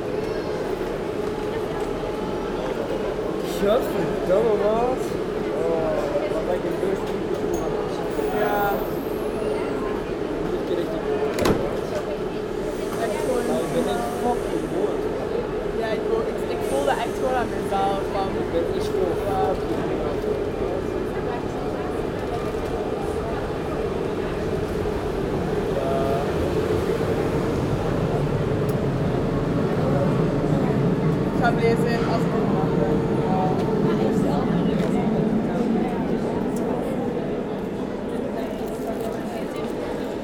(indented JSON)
{
  "title": "Brussel, Belgium - Brussels Centraal Station",
  "date": "2018-08-25 11:57:00",
  "description": "The Brussels Central station, a big underground train station. Walking into the main hall with huge reverb and after, listening to a train leaving the platform 6. This is the busiest station of the world. Only with 6 tracks, a train every 20 seconds in business day and rush hour.",
  "latitude": "50.85",
  "longitude": "4.36",
  "altitude": "35",
  "timezone": "GMT+1"
}